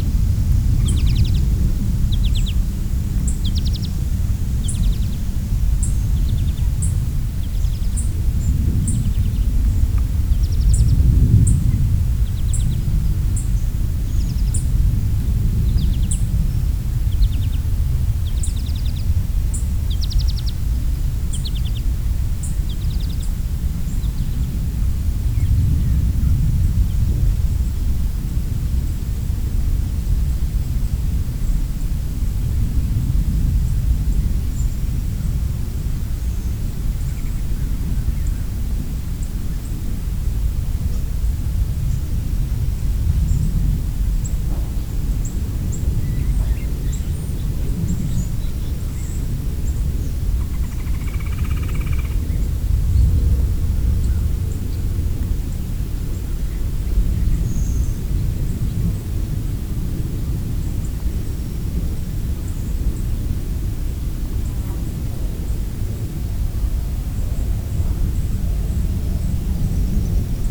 At the entrance to Grass Lake Sanctuary there is a large rock with the street address inscribed on it. These are sounds heard while sitting beside the rock. Birds, bugs and the occasional passing car.
WLD, phonography, Grass Lake Sanctuary